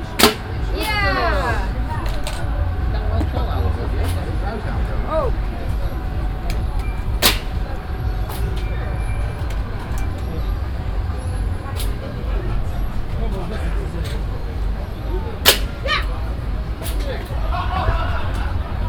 diekirch, kiosque, kermess, air rifle shooting
On the kermess. Two stands with air rifles. The sound of music, agenerator, the pneumatic shots and people talking and having fun as targets got shot.
international village scapes - topographic field recordings and social ambiences